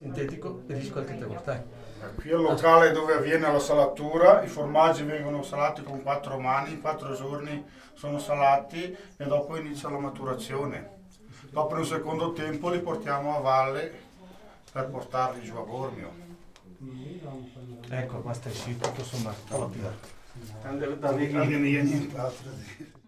Valdidentro SO, Italia - shepherd says